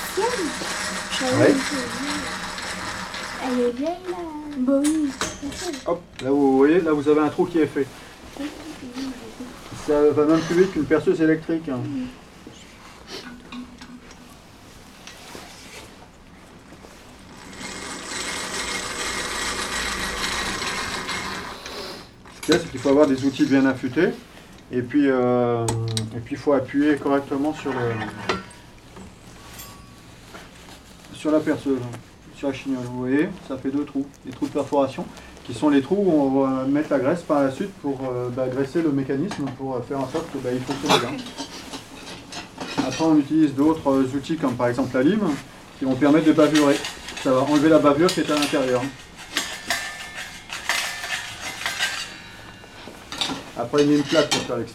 Le Bourg, Le Mage, France - Girouettier
Enregistrement dans l'atelier de Thierry Soret, Girouettier, Le Mage dans l'Orne. Dans le cadre de l'atelier "Ecouter ici ) ) )". Enregistreur Zoom H6 et paire de micros Neumann KM140.